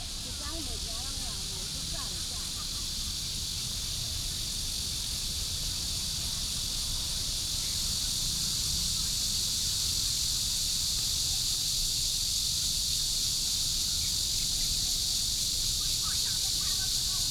in the Park, Cicada cry, traffic sound, Retired elderly and women